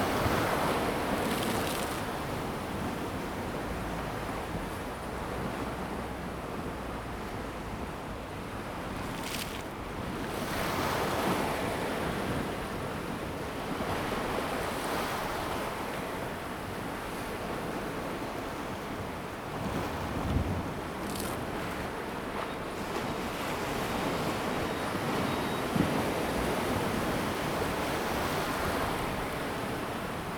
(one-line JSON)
{"title": "鳳坑漁港, Xinfeng Township - Seawater high tide time", "date": "2017-08-26 12:40:00", "description": "Seawater high tide time, Small pier, The sea is slowly rising tide\nZoom H2n MS+XY", "latitude": "24.90", "longitude": "120.97", "timezone": "Asia/Taipei"}